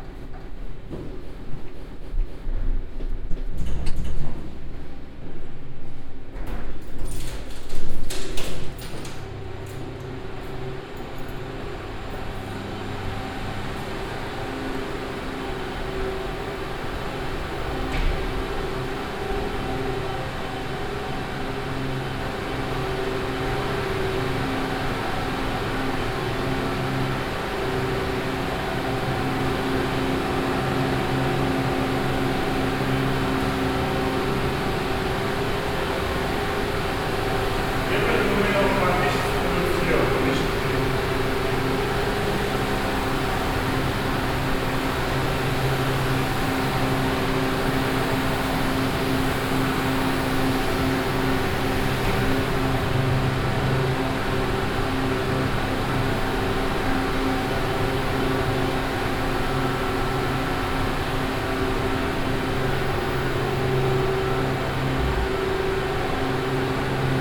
vianden, dam wall, generator room
Walking down and opening the doors to the generator room of the dam. The sound of the engines and SEO engineer Mr. Schuhmacher explaining that there is no machine active by the time to generate electricity.
Vianden, Damm, Maschinenraum
Hinuntergehend und die Türen des Motorenraums des Dammes öffnend. Das Geräusch von Maschinen und SEO-Mechaniker Herr Schuhmacher erklärt, dass gegenwärtig keine Maschine zur Energieerzeugung aktiv ist.
Vianden, Mur du barrage, salle des transformateurs
Descente et ouverture des portes qui mènent à la salle des générateurs du barrage. Le bruit des moteurs et M. Schuhmacher, l’ingénieur de SEO, expliquant qu’aucune machine n’est actuellement en train de produire de l’électricité.
Luxembourg